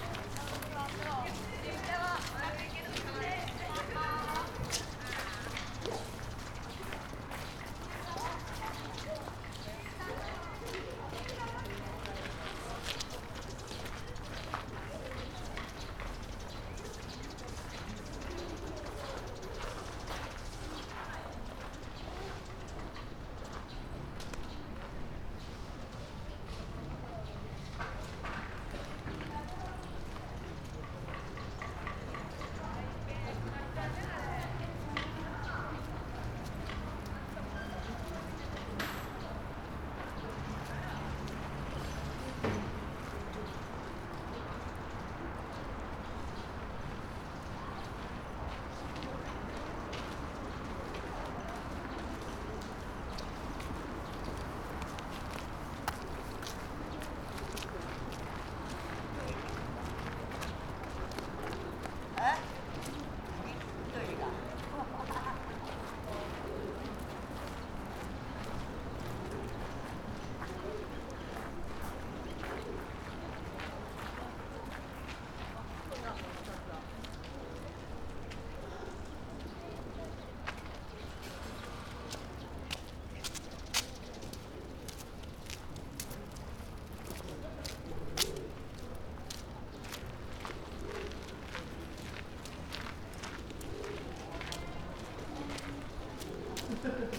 Osaka Tennōji district, entrance to Shitennoji Temple - entrance to Shitennoji Temple
visitors passing, steps, conversations, bell in the distance